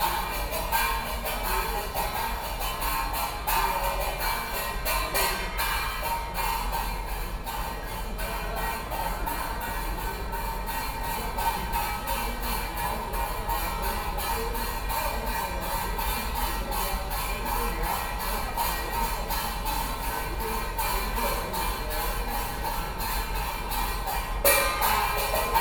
Funeral, Taoist chanting, Zoom H4n+ Soundman OKM II
台中市, 中華民國, 18 May, 11:29